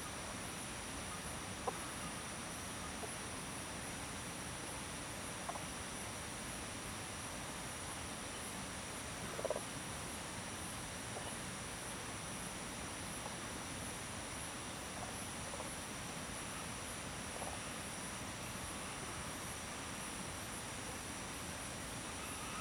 種瓜路桃米里, Puli Township, Taiwan - Frog Sound
Stream, Frog Sound
Zoom H2n MS+XY